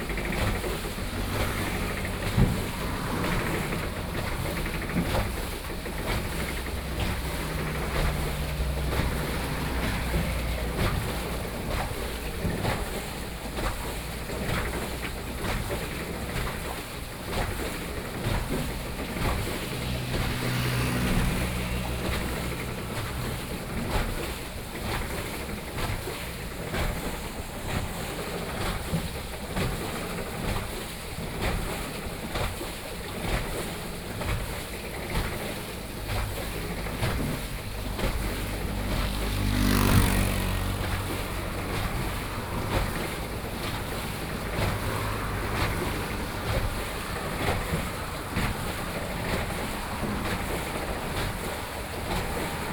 三星鄉大隱村, Yilan County - Waterwheel
Waterwheel, Hydro, Small village, Traffic Sound
Sony PCM D50+ Soundman OKM II
Sanxing Township, Yilan County, Taiwan